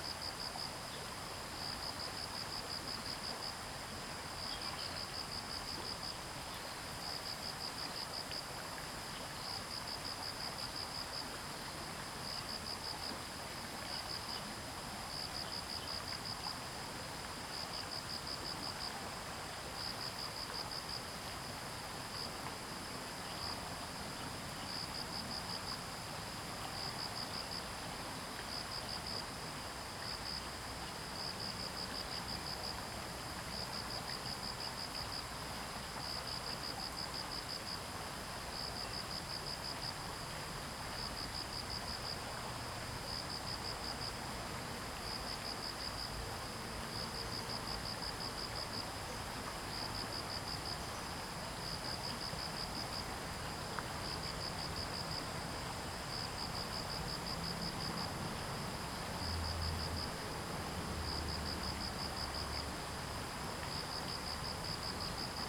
桃米溪, 埔里鎮桃米里 - streams and Insect sounds
sound of water streams, Insect sounds
Zoom H2n MS+XY
Puli Township, Nantou County, Taiwan, 11 August 2015, 07:20